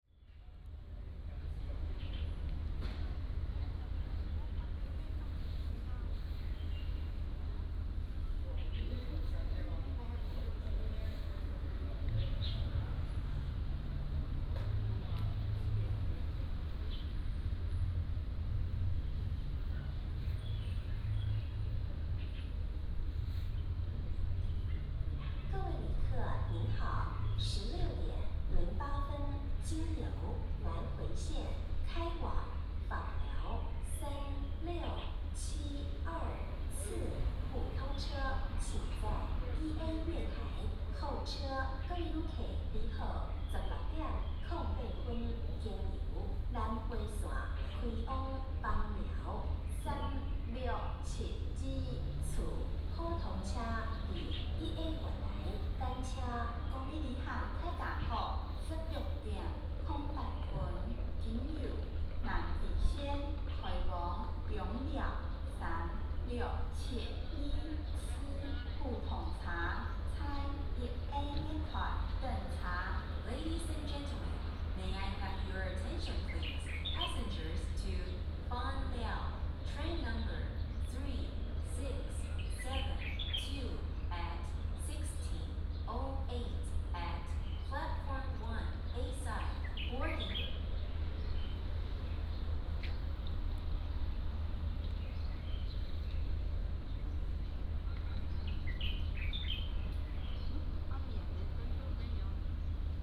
Taitung Station, Taiwan - Outside the station
Outside the station, Birds singing